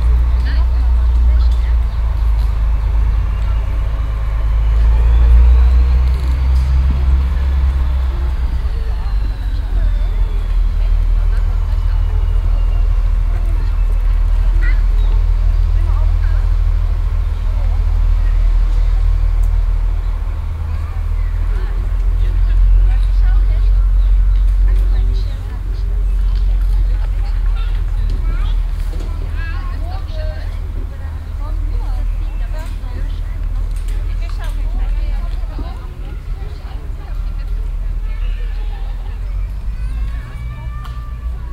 cologne, stadtgarten, kinderspielplatz, platz 1
stereofeldaufnahmen im mai 08 - morgens
project: klang raum garten/ sound in public spaces - in & outdoor nearfield recordings